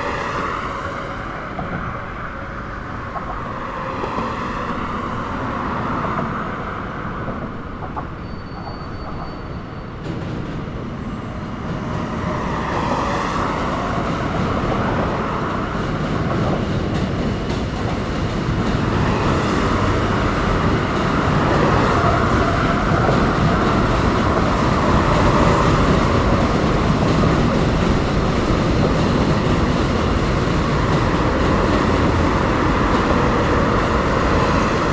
Delancey St/FDR Dr, New York, NY, USA - Crossing the Williamsburg Bridge to Brooklyn
Sounds of traffic leaving Manhattan.
Zoom H6
NYC, New York, USA, August 2019